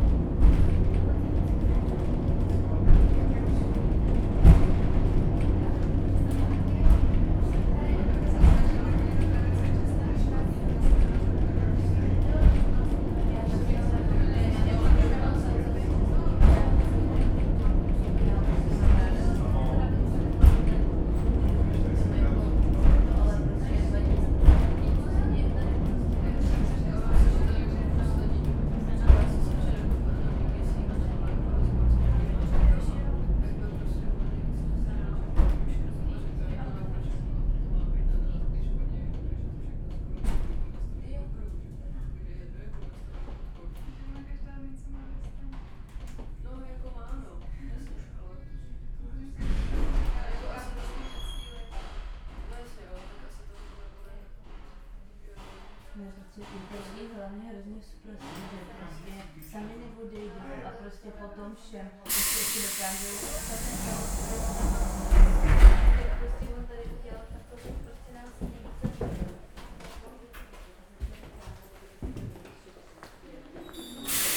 Praha, Petřín funicular
compete ride downwards
Prague-Prague, Czech Republic, 22 June 2011